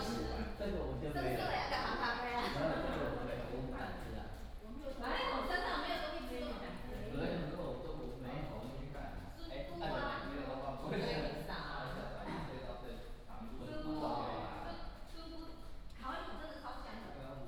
舊百吉隧道, Daxi Dist. - into the old tunnel
Go into the old tunnel, Tourists, Traffic sound
Taoyuan City, Daxi District, 舊百吉隧道, 9 August 2017, ~17:00